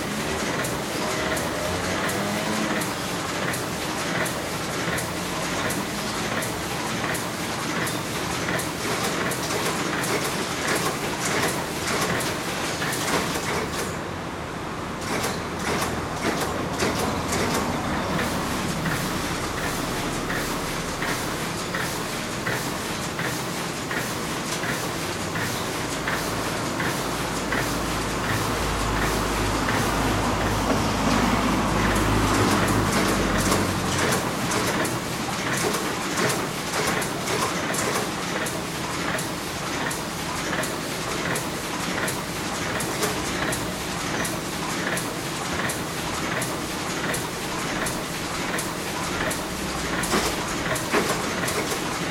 Barcelona - Petita Impremta (Small Printing Workshop)